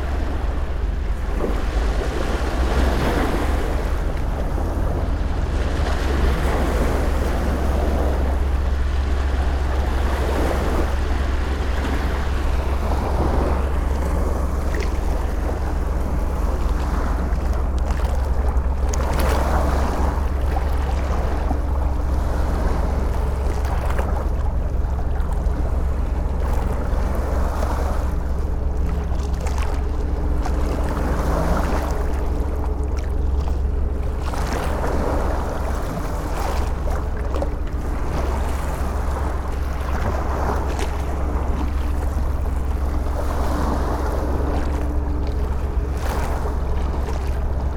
Quillebeuf-sur-Seine, France - High tide
Recording of the high tide in the Seine river, the river is flowing backwards. A big boat is passing by the river.
22 July 2016